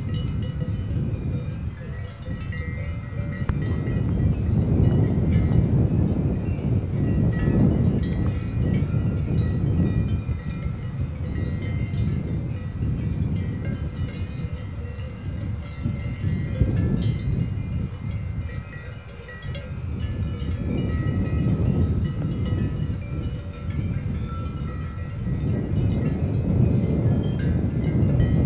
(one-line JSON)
{"title": "Feldberg, Bismarckdenkmal - cowbells", "date": "2014-09-10 19:31:00", "description": "as I approached the place all i could notice were cow bells in dense fog. within the few minutes of recording the whole hill slope cleared of the fog and dozens of cows grazing were to be seen in the distance.", "latitude": "47.87", "longitude": "8.03", "altitude": "1442", "timezone": "Europe/Berlin"}